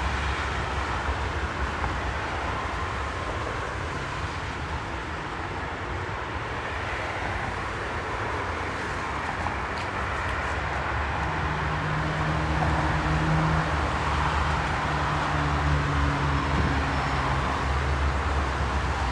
Rathauspassage parking garage bridge
ambient soundscape from the bridge of the parkplatz, Aporee workshop
Germany, February 2010